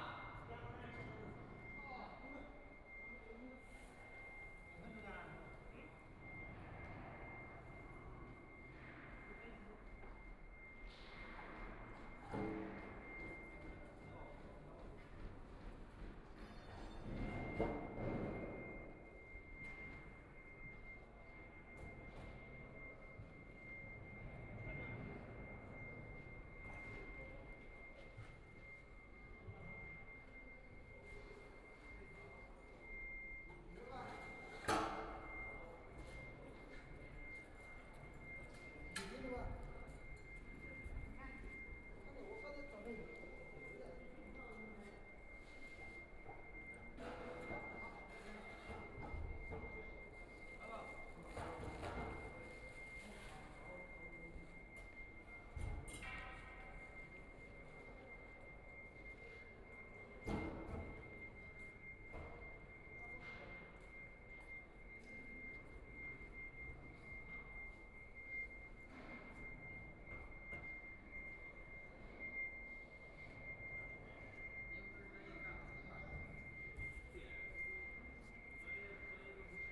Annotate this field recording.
Construction workers are arranged exhibition, Standing on the third floor hall museum, The museum exhibition is arranged, Binaural recording, Zoom H6+ Soundman OKM II